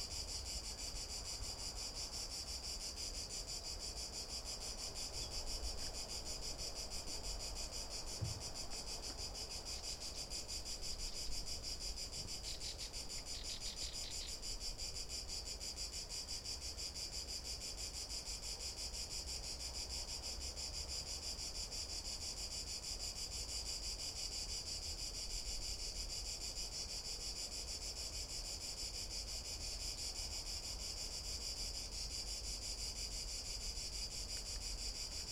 Unnamed Road, Valencia, España - Paseo por la Albufera
Una tarde de paseo por la Albufera de Valencia, una joya de la Comunidad Valenciana. Paseamos alrededor del lago, en este caso por unas pasarelas en donde nos paramos para grabar a una Chicharra [Cicadidae]. Se puede escuchar también un "piú-piú" de un ave que no logro saber cual es, lo que si se escucha es una Curruca Cabecinegra [Sylvia melanocephala] en el segundo 59 mas o menos. Se escucha el sonido del mar que está muy cerca y del lago, y algún coche pasar del parking cercano.
Comunitat Valenciana, España, 14 August 2020, ~19:00